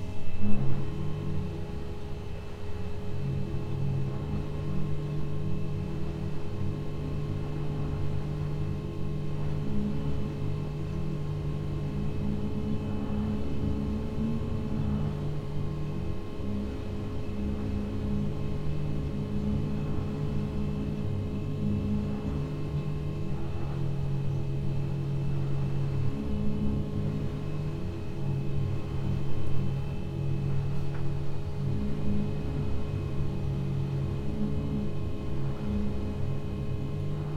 room, Novigrad, Croatia - wind instrument
room as wind instrument, refrigerator, with my soft contribution while opening/closing the doors
13 September 2012